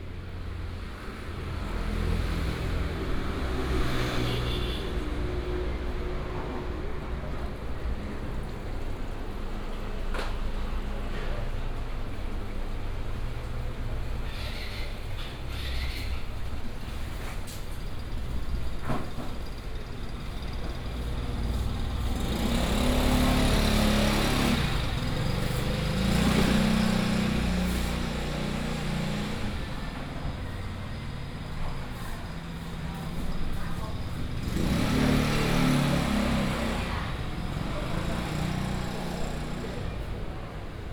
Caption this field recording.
Bird sound, Traffic sound, Small market, Under the bridge